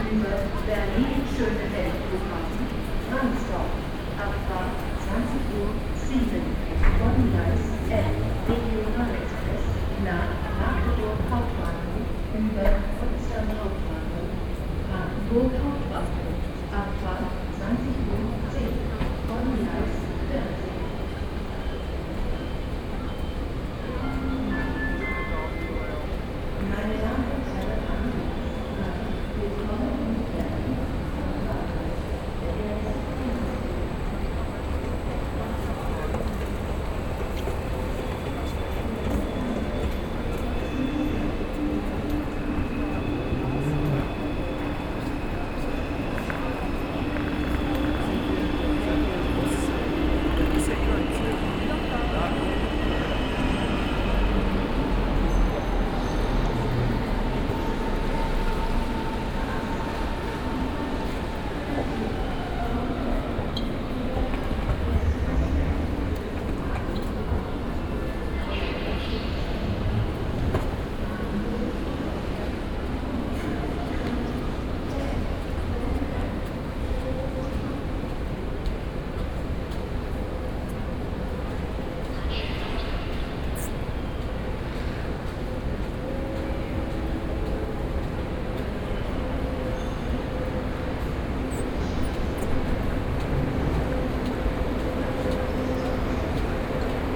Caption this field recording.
binaural recording of a movement from the lowest platforms to the top level of the station.